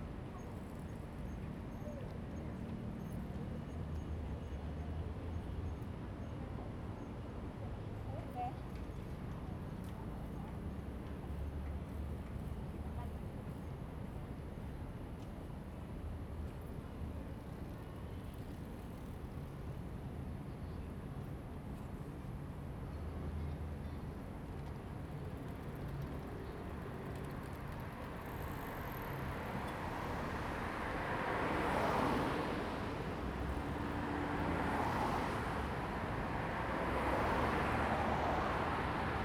Sec., Xinyi Rd., Da’an Dist. - Traffic Sound
Traffic Sound
Zoom H2n MS+XY
Taipei City, Taiwan, 28 June 2015